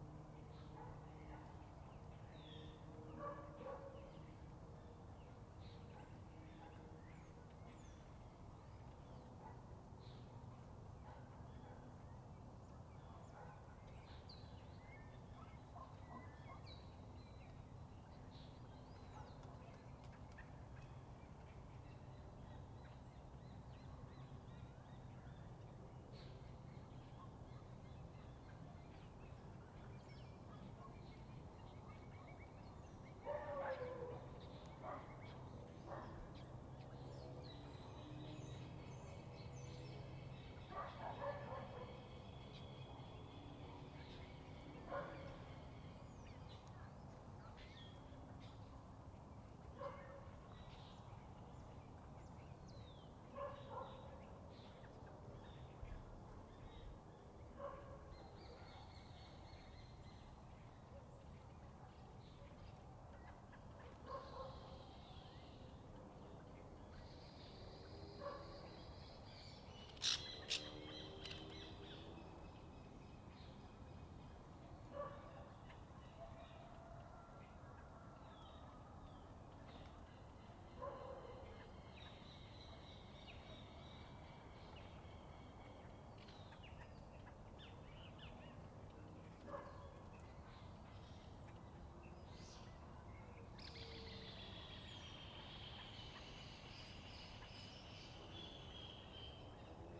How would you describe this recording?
Recorriendo el Camino de Hueso, desde los límites rurales de Mercedes hasta la Ruta Nacional 5